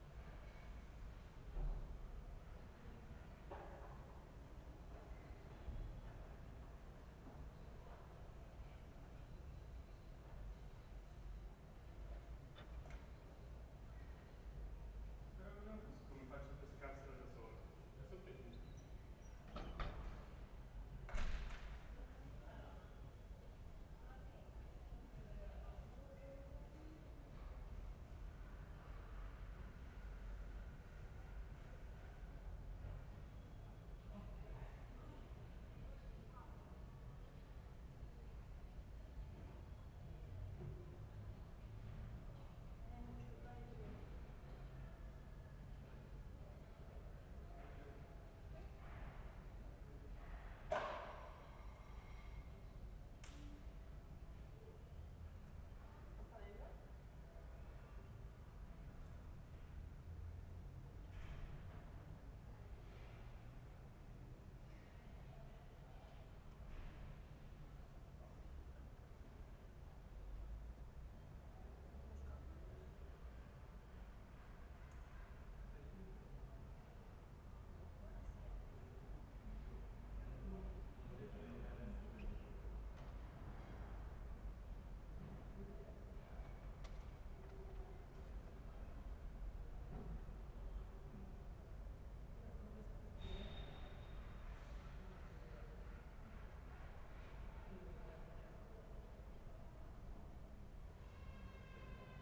Ascolto il tuo cuore, città. I listen to your heart, city. Several chapters **SCROLL DOWN FOR ALL RECORDINGS** - Saturday afternoon without passages of plane in the time of COVID19 Soundscape
"Saturday afternoon without passages of plane in the time of COVID19" Soundscape
Chapter LXXI of Ascolto il tuo cuore, città. I listen to your heart, city.
Saturday May 9th 2020. Fixed position on an internal (East) terrace at San Salvario district Turin, sixty days after (but sixth day of Phase 2) emergency disposition due to the epidemic of COVID19.
Start at 2:59 p.m. end at 4:00 p.m. duration of recording 01:01:00
Provincia di Torino, Piemonte, Italia, May 2020